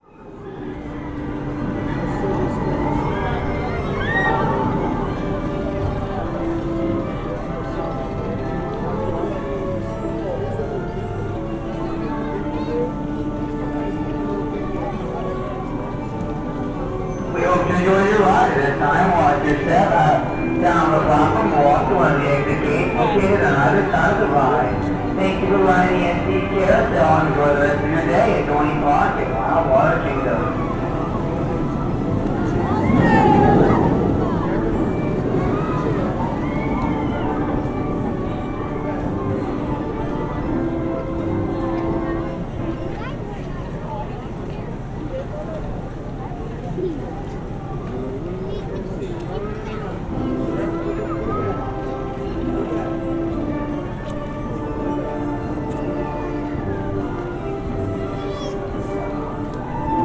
Dorney Park and Wildwater Kingdom, Dorney Park Road, Allentown, PA, USA - The Sunken Hum Broadcast 169 - Merry Go Round and Distant Rollercoaster Screams - 18 June 2013
There's something slightly maddening about the music from a Merry Go Round. The sounds of a day out in Dorney Park, PA.
Lehigh, Pennsylvania, United States of America, 17 June 2013, 17:30